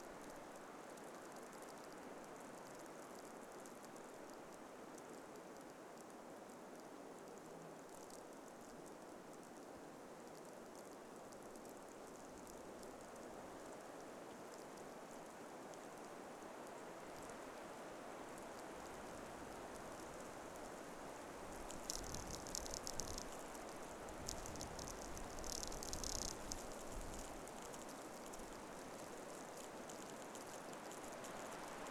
stalking through the frozen march I encountered some strange flipping-flopping sound. After short investigation I discovered that it is produced by half torn-off birch bark rapidly waving in the blizzard
Lithuania